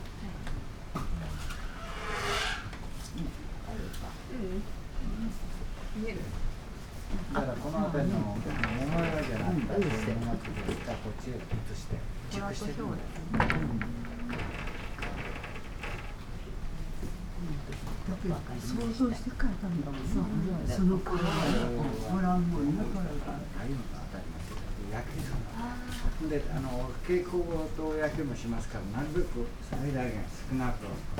wooden corridor with sliding doors, squeaking wheelchair, people, rain
gardens sonority